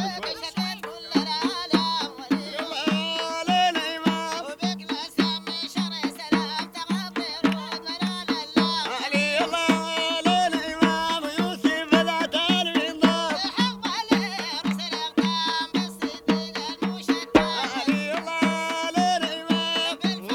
sorry, i doubled the other song, here is a new one.